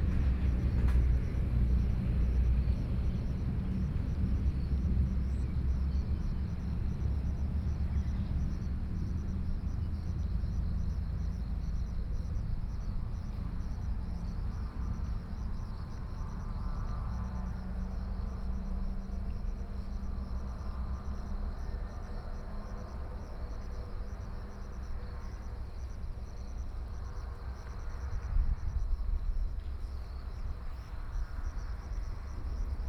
蘭陽大橋, Yilan County - Trains traveling through
Traffic Sound, Trains traveling through
Sony PCM D50+ Soundman OKM II
Wujie Township, 五結堤防道路, July 2014